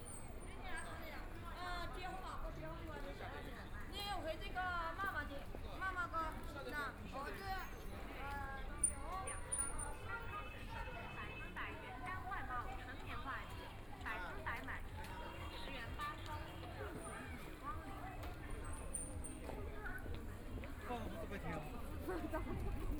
{
  "title": "Guangqi Road, Shanghai - Evening bazaars and markets",
  "date": "2013-11-29 17:12:00",
  "description": "Walking through the Street, Traffic Sound, Walking through the market, Evening bazaars and markets\nThe pedestrian, Binaural recording, Zoom H6+ Soundman OKM II",
  "latitude": "31.23",
  "longitude": "121.49",
  "altitude": "12",
  "timezone": "Asia/Shanghai"
}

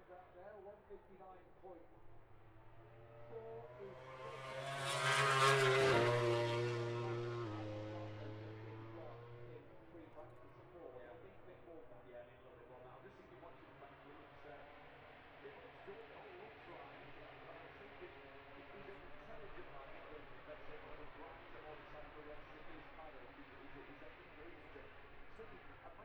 {
  "title": "Silverstone Circuit, Towcester, UK - british motorcycle grand prix 2022 ... moto grand prix ......",
  "date": "2022-08-06 14:10:00",
  "description": "british motorcycle grand prix 2022 ... moto grand prix qualifying one ... zoom h4n pro integral mics ... on mini tripod ...",
  "latitude": "52.08",
  "longitude": "-1.01",
  "altitude": "158",
  "timezone": "Europe/London"
}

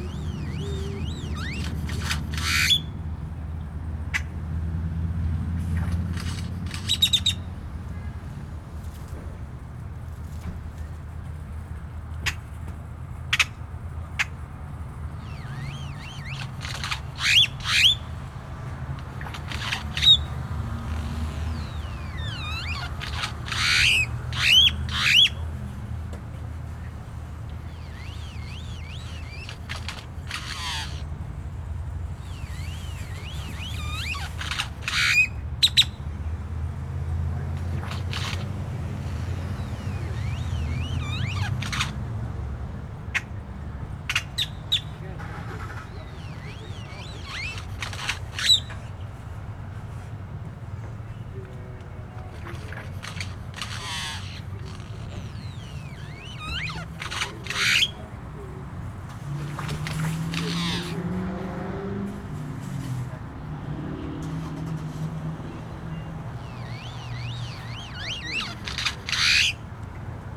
{
  "title": "Solitary male Grackle calls, Clear Lake, Houston, TX, USA - Solitary Grackle call",
  "date": "2012-11-10 13:30:00",
  "description": "*Binaural* Weird calls from a single male Grackle in a small tree outside a grocery store. Traffic sounds, store employees smoking and talking.\nCA-14 omnis > DR100 MK2",
  "latitude": "29.56",
  "longitude": "-95.14",
  "altitude": "14",
  "timezone": "America/Chicago"
}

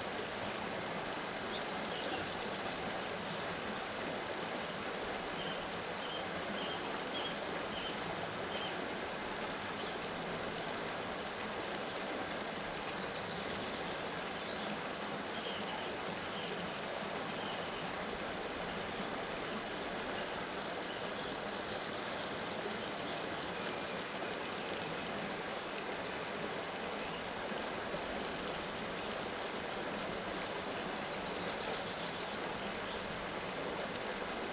{
  "title": "Tyne Steps Garrigill, Alston, UK - Tyne Steps",
  "date": "2022-03-27 18:22:00",
  "description": "In Garrigill there is a bridge over the River South Tyne and down below there is a wee sitting area. Which were designed by Peter Lexie Elliott circa 2000. Bit tricky to get down to, so please don't try when wet. And in typical country side style, shortly after I hit record a farm vehicle comes driving over the bridge. You can hear in the recording, with binaural microphones, that I'm moving around having a look under the bridge from the steps.",
  "latitude": "54.77",
  "longitude": "-2.40",
  "altitude": "348",
  "timezone": "Europe/London"
}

